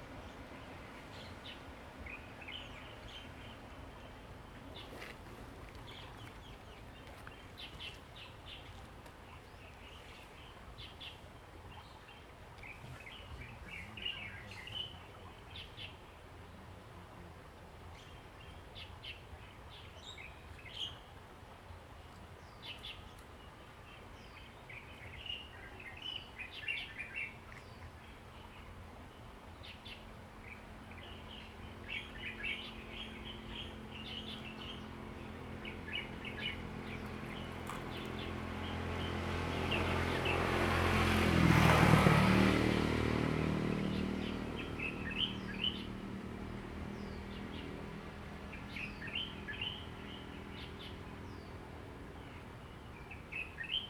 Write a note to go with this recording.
Small village, Birdsong, Traffic Sound, Very hot weather, Zoom H2n MS + XY